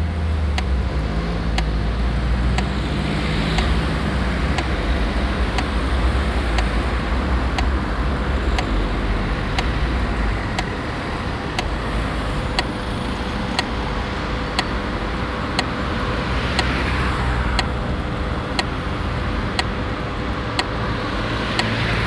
Stoppenberg, Essen, Deutschland - essen, am schultenhof, traffic light sound signals
An einer Ampelanlage an einer Kreuzung. Das Klicken der Signalgeber für blinde Menschen und das sonore Brummen einer Vibrationsschaltung bei Grün im allgemeinen Verkehrstreiben.
At a traffic light at a crossing. The click sounds of the audible system for blind people and the sonorous hum of a vibration as th traffic light turns green sounding inside the overall traffic noise.
Projekt - Stadtklang//: Hörorte - topographic field recordings and social ambiences
Essen, Germany